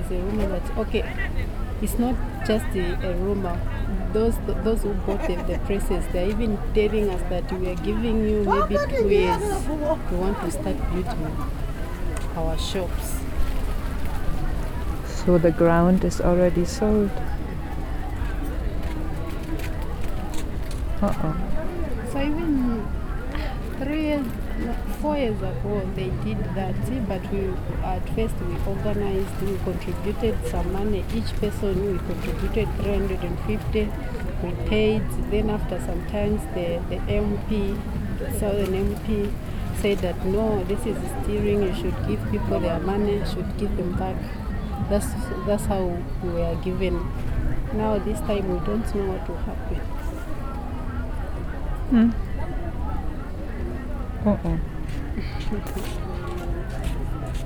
Street Market, Choma, Zambia - Chitenge traders - they dont want us here...
When I returned to Choma in June 2018, after two years, I was surprised seeing merely big building work and no market stalls and traders along the road. Since I had already spent a good ten days in Lusaka, I had seen similar development there, had spoken with traders, and learnt that since outbreak of the Cholera earlier that year, street vending had been forbidden, and market traders mostly not allowed to return to their business (apart only from a very small group of those certified as handicapped). However, a majority of people in the Zambian society rely on this part of the economy for their and their family’s daily survival. A couple of street markets in Zambia had recently gone up in flames; and I came across various rumours of arson. The later may come with little surprise reading below a quote from a local government announcement in Southern Province In Jan.
Southern Province, Zambia, August 2018